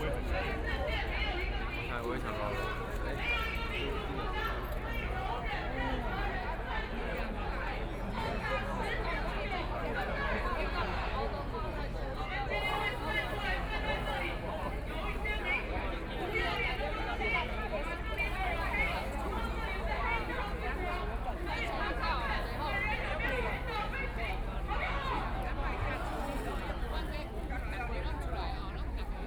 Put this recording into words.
Underworld gang leaders led a group of people, In a rude language against the people involved in the student movement of students